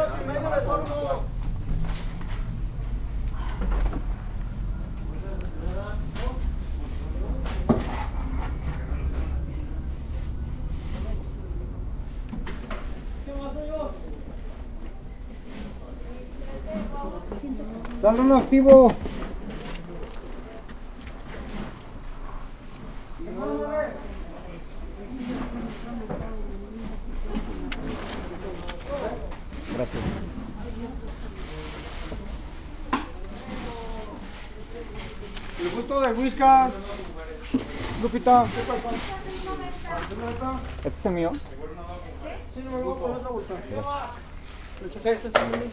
Av La Merced, La Merced, León, Gto., Mexico - Previniéndome con alimento para pájaros al principio de la cuarentena COVID-19.
Preventing myself with bird food at the beginning of the COVID-19 quarantine.
It seems that several people were doing their shopping so that they no longer had to leave during the quarantine.
This is in Comercializadora Los Laureles SAN JOAQUIN Cereales, Granos Y Especias.
I made this recording on March 21st, 2020, at 12:27 p.m.
I used a Tascam DR-05X with its built-in microphones and a Tascam WS-11 windshield.
Original Recording:
Type: Stereo
Parece que varias personas estaban haciendo sus compras para ya no tener que salir durante la cuarentena.
Esto es en Comercializadora Los Laureles SAN JOAQUÍN Cereales, Granos Y Especias.
Esta grabación la hice el 21 de marzo 2020 a las 12:27 horas.
Guanajuato, México, 21 March 2020